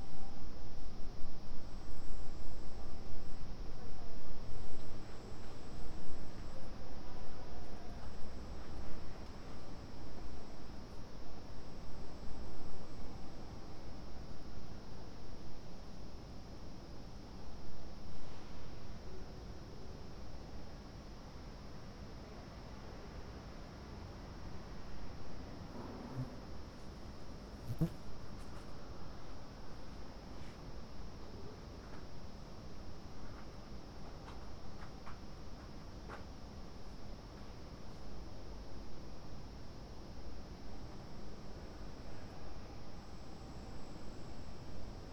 Ascolto il tuo cuore, città, I listen to your heart, city. Several chapters **SCROLL DOWN FOR ALL RECORDINGS** - Windy afternoon on terrace in the time of COVID19: soundscape.
"Windy afternoon on terrace in the time of COVID19": soundscape.
Chapter CLIII of Ascolto il tuo cuore, città. I listen to your heart, city
Thursday January 14th 2021. Fixed position on an internal terrace at San Salvario district Turin, more then nine weeks of new restrictive disposition due to the epidemic of COVID19.
Start at 01:40 p.m. end at 02:03 p.m. duration of recording 33’05”
Piemonte, Italia, 14 January 2021, ~14:00